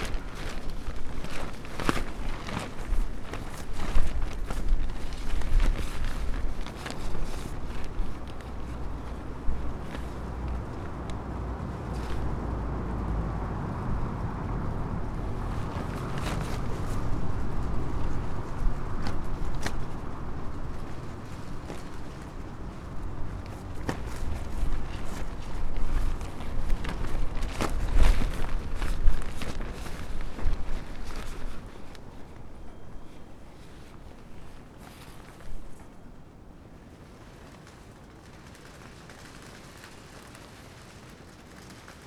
berlin: friedelstraße - the city, the country & me: motorbike cover
motorbike cover flapping in the wind
the city, the country & me: february 1, 2013
1 February 2013, 02:54